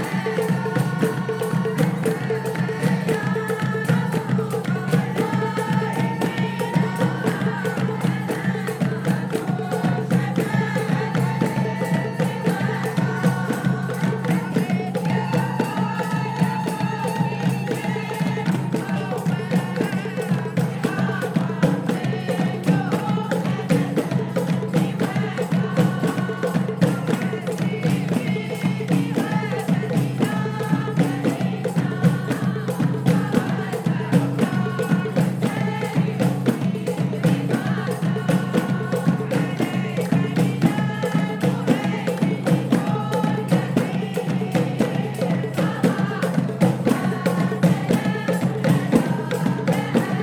Médina, Marrakech, Maroc - Sufi song
In Dar Bellarj Fondation, a group of women sing a sufi song during the 5th Marrakesh Biennale. One of them, Maria, talk about the project.